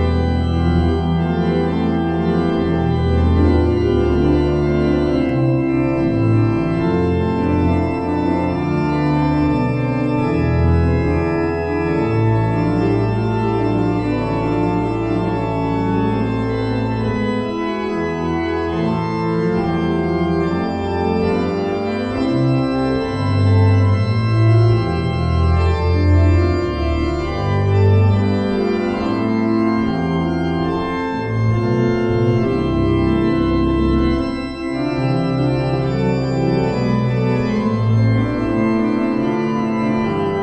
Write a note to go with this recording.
ein organist betritt die dorfkirche von st. peter ording und beginnt nach einer weile, ein orgelstueck, vermutlich von bach, zu spielen: an organ-player entering the church of st. peter and starting to play a piece presumably by js bach